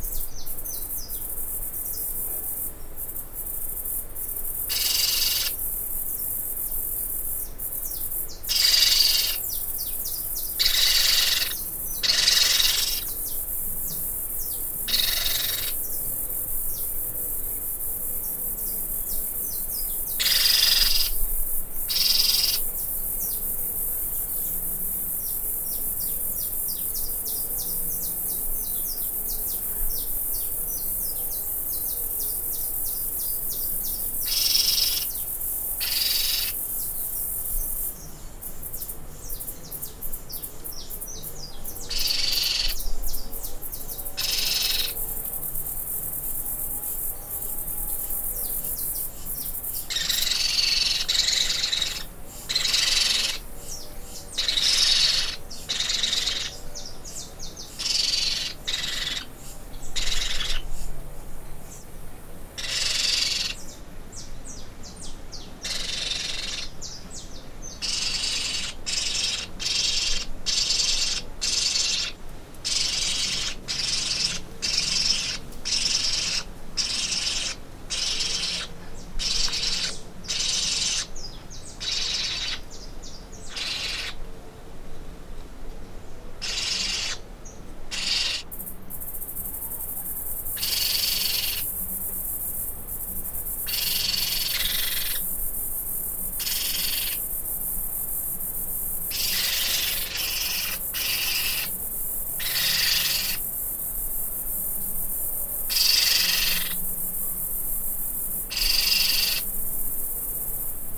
Tangará, Rio Acima - MG, 34300-000, Brasil - Baby pionus (parrots) screaming
2 baby pionus (parrots) screaming on the roof in the interior of Minas Gerais, Brazil.
Twin sound: neighing horse
Recorded by a MS Setup Schoeps CCM41+CCM8
in a Cinela Windscreen Pianissimo
on a MixPre-6 – Sound Devices Recorder